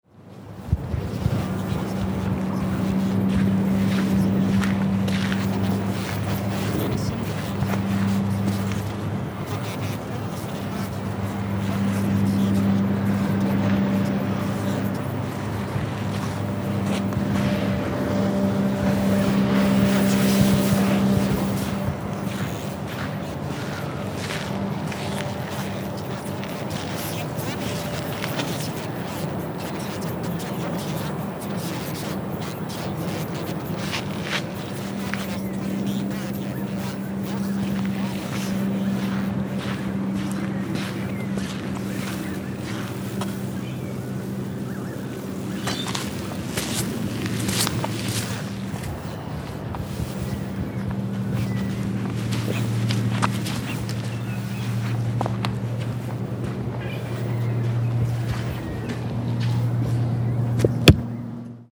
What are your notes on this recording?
Weird interference with my recording - not sure how this happened.. this recording was made as I moved around the park spaced out trying to work out where it was coming from MKH 416, Custom Preamps, H4n